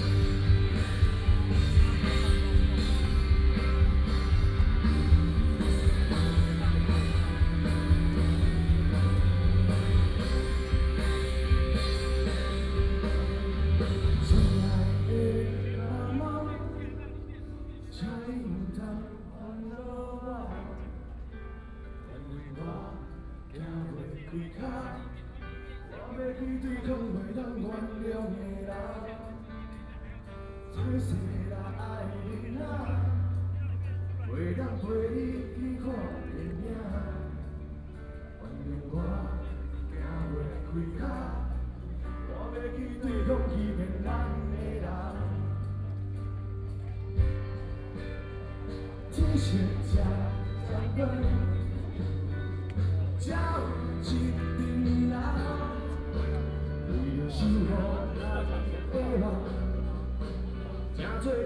Ketagalan Boulevard, Zhongzheng District, Taipei City - cheering
Rock band, The scene of protests, People cheering, Nearby streets are packed with all the people participating in the protest, The number of people participating in protests over Half a million
Binaural recordings, Sony PCM D100 + Soundman OKM II